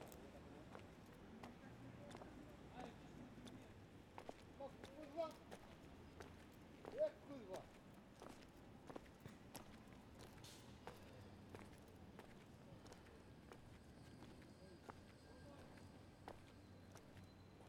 Saint-Gilles, Belgium - The Accordion player in the market
The busker in the market street, playing accordion. Audio Technica BP4029 and FOSTEX FR-2LE.
België - Belgique - Belgien, European Union, 20 June 2013, ~16:00